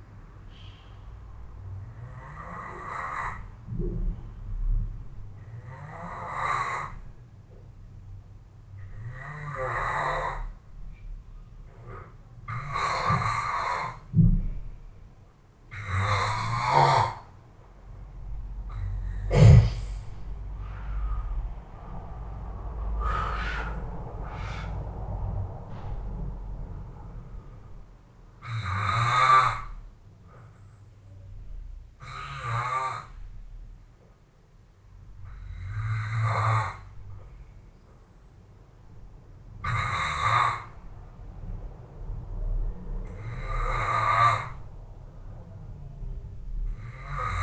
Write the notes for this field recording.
Recording of a snoring concert in the middle of the night. Recorded with Zoom H4